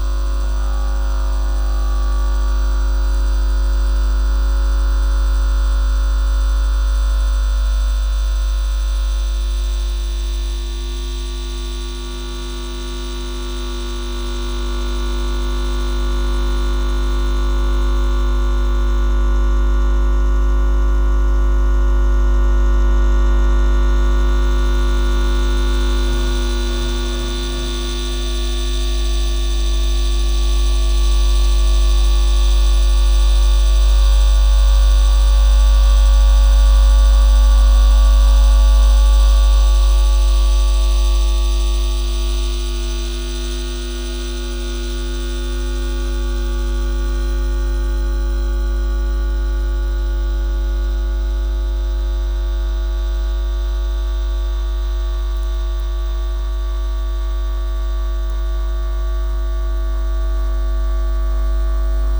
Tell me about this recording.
Electromagnetic recording during a walk below a 70 kv electric line.